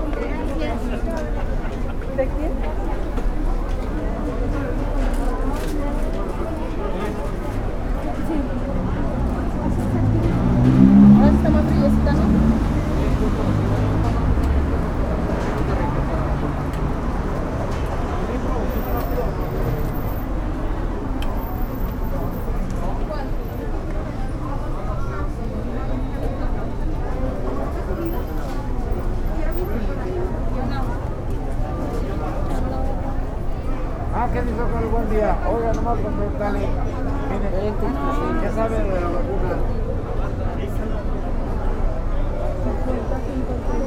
IMSS, Blvd A. López Mateos, Obregon, León, Gto., Mexico - Fila para aplicación de la segunda dosis de vacuna contra COVID-19 para adultos mayores de 60 años en el Seguro Social IMSS T1.
The line to get the second dose vaccine to COVID-19 for people over 60 years old at Social Security IMSS T1.
I made this recording on May 31st, 2021, at 12:13 p.m.
I used a Tascam DR-05X with its built-in microphones and a Tascam WS-11 windshield.
Original Recording:
Type: Stereo
Esta grabación la hice el 31 de mayo de 2021 a las 12:13 horas.